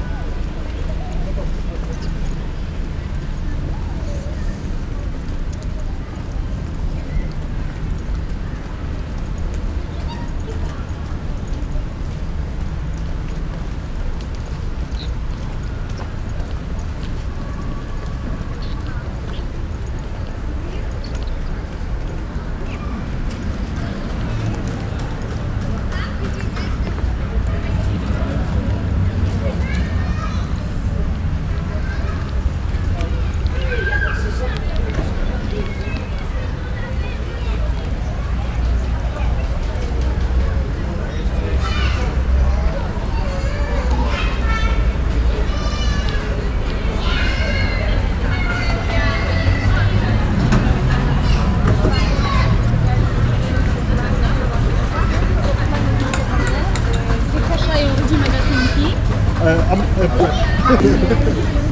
Gdańsk, Polska - IKM picnic 4
Dźwięki nagrano podczas pikniku zrealizowanego przez Instytut Kultury Miejskiej.